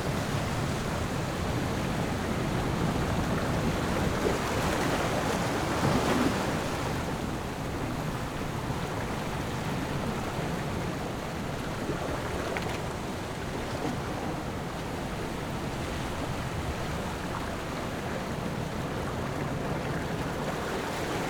朗島村, Ponso no Tao - On the coast
On the coast, Sound of the waves
Zoom H6+Rode NT4